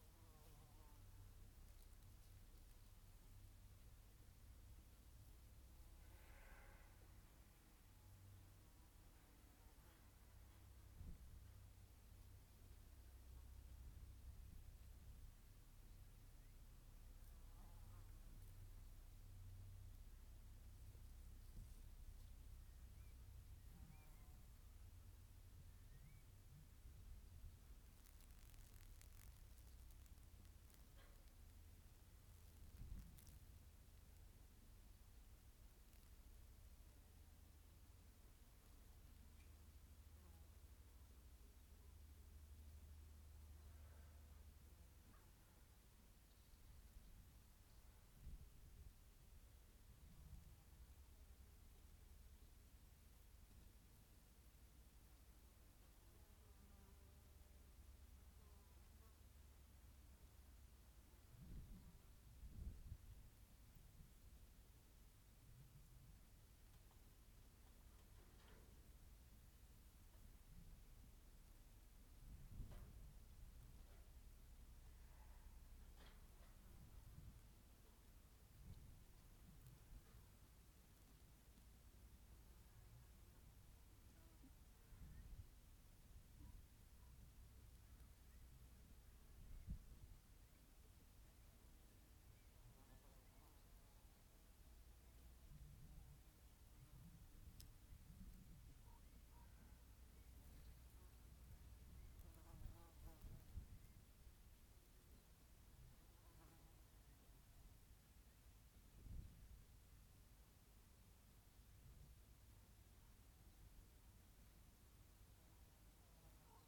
North Hamarsland, Tingwall, Shetland Islands, UK - Eavesdropping on grazing sheep
This is the sound of Pete Glanville's organic Shetland sheep grazing in their field. It is a very quiet recording, but I think that if you listen closely you can hear the sheep grazing in it. Recorded with Naint X-X microphones slung over a fence and plugged into a FOSTEX FR-2LE